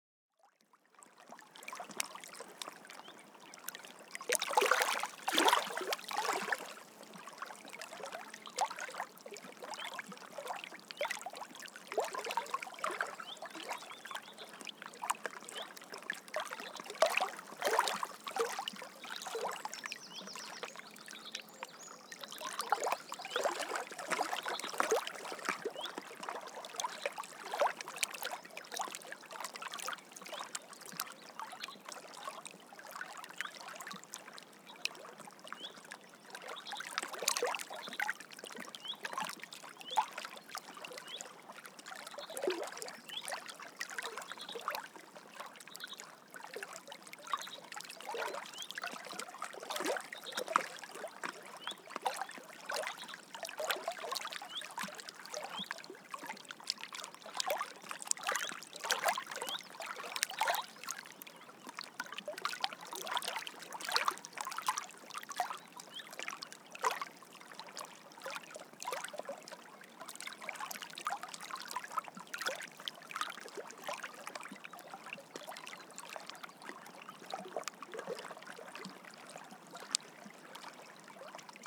Gentle waves lapping on a sunny spring day.